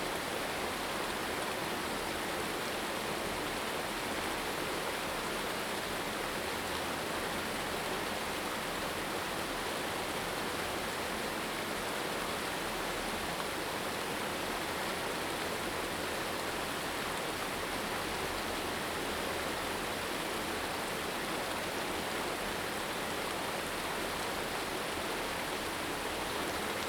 埔里鎮桃米溪, Puli Township - Stream sound
Stream sound, Bird sounds
Zoom H2n MS+XY
Puli Township, 水上巷, 26 March 2016